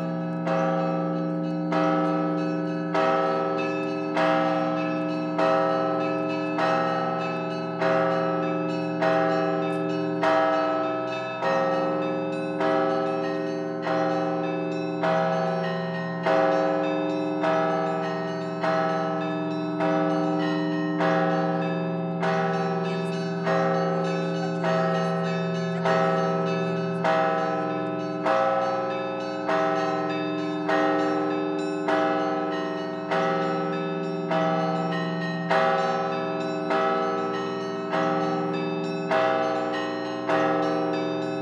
{"title": "Суздаль, Владимирская обл., Россия - Bell concert", "date": "2021-06-13 17:03:00", "description": "One of the bell concerts in the Spaso-Efimiev Monastery (Monastery of Saint Euthymius), which take place in the beggining of every hour in a day.\nRecorded with Zoom H2n near the bell tower.", "latitude": "56.43", "longitude": "40.44", "altitude": "123", "timezone": "Europe/Moscow"}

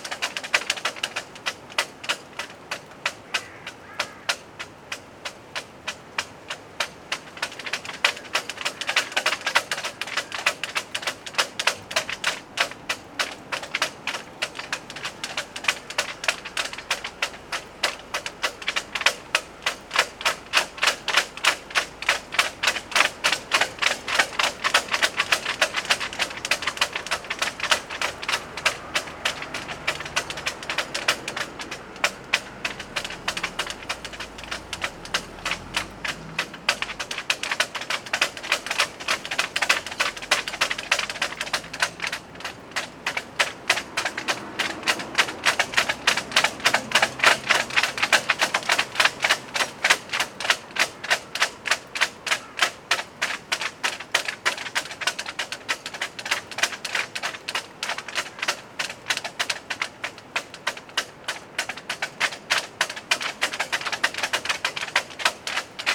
{"title": "3 mats au vent", "date": "2008-03-13 16:35:00", "description": "Parc de Penn Avel\nRythmique de cordage\nTempête à venir...", "latitude": "47.29", "longitude": "-2.52", "altitude": "5", "timezone": "Europe/Paris"}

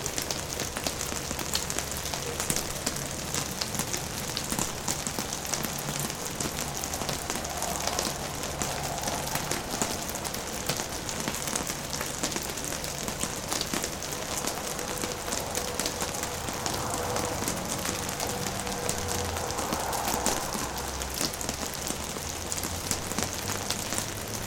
{"title": "Mooste Estonia, rain on ice on snow", "date": "2011-01-17 17:07:00", "description": "terrible weather, rain on ice on snow", "latitude": "58.16", "longitude": "27.19", "altitude": "50", "timezone": "Europe/Berlin"}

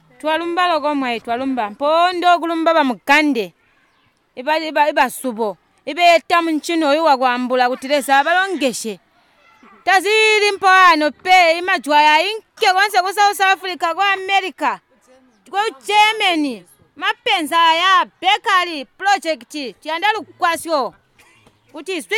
{"title": "Chinonge, Binga, Zimbabwe - Chinonge Women's Forum presents...", "date": "2016-06-16 11:55:00", "description": "...this is how it sounds when the women of Zubo's Chinonge Women’s Forum meet, present their project work to each other and discuss their activities in the community…\nZubo Trust is a women’s organization bringing women together for self-empowerment.", "latitude": "-18.00", "longitude": "27.46", "altitude": "846", "timezone": "GMT+1"}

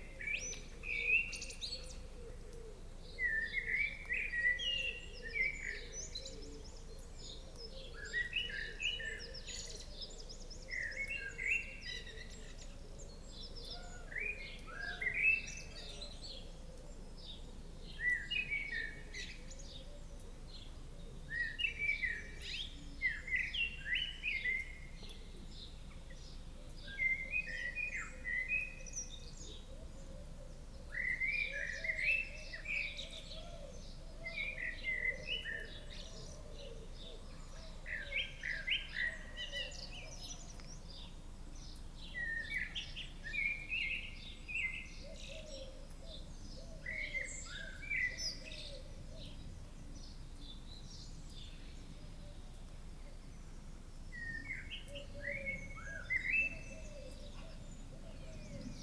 {"title": "Mont-Saint-Guibert, Belgique - Mont-St-Guibert station", "date": "2016-03-05 07:44:00", "description": "At the Mont-Saint-Guibert station, early on the morning.\nGoing to Flavien's home in Brussels :-)\nWaiting from the train, a few people on the platform and a blackbird singing loudly. The train arrives. Inside the train, a person was sleeping, she miss the stop. Waking up, she uses the alarm. It makes a strident painful noise. I leave !", "latitude": "50.64", "longitude": "4.61", "altitude": "117", "timezone": "Europe/Brussels"}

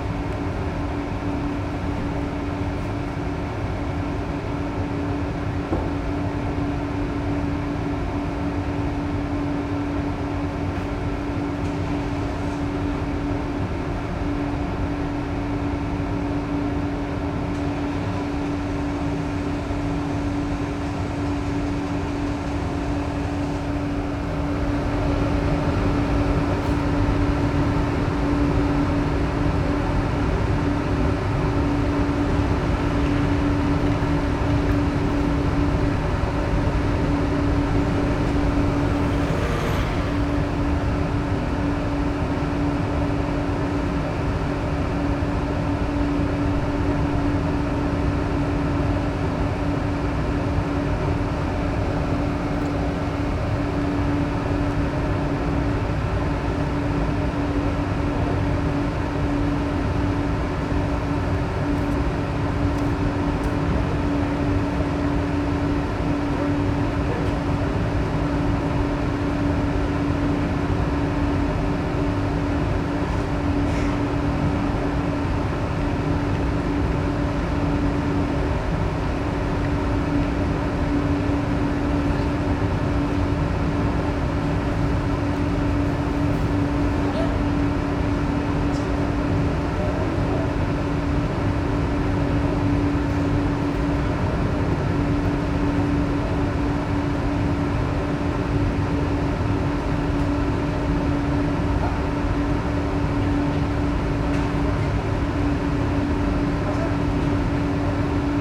{"title": "Colombarium ventilation 1a", "date": "2010-11-01 14:47:00", "description": "Fête des Morts\nCimetière du Père Lachaise - Paris\nVentilation, grille murale", "latitude": "48.86", "longitude": "2.40", "altitude": "96", "timezone": "Europe/Paris"}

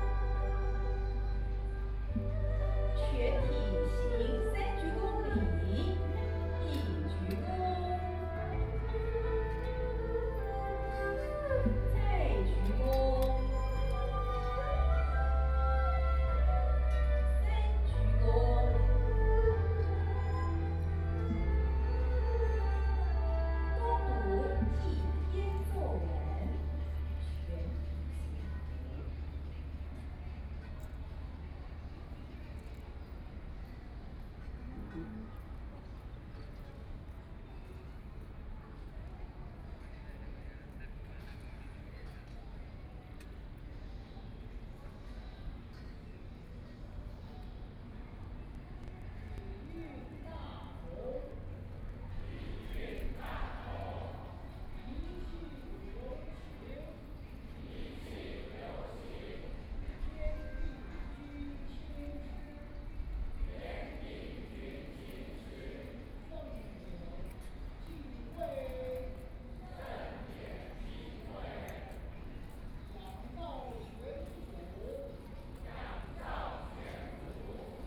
{"title": "National Chiang Kai-shek Memorial Hall, Taipei - ceremony", "date": "2013-05-25 10:09:00", "description": "Martial religious sects ceremony, Sony PCM D50 + Soundman OKM II", "latitude": "25.04", "longitude": "121.52", "altitude": "12", "timezone": "Asia/Taipei"}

